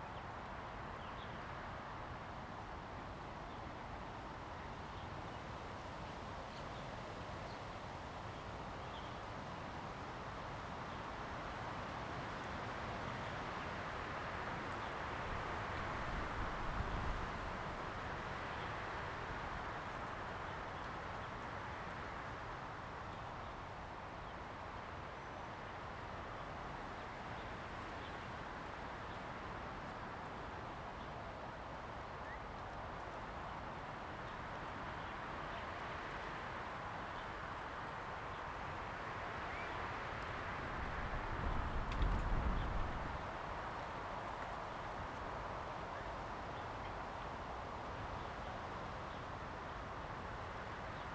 Jinning Township, Kinmen County - At the lake
Birds singing, Wind, In the woods
Zoom H2n MS+XY